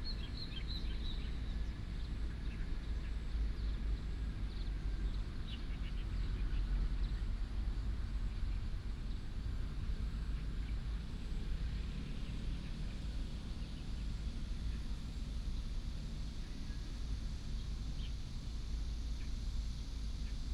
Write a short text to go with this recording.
Next to the refinery, traffic sound, birds sound, dog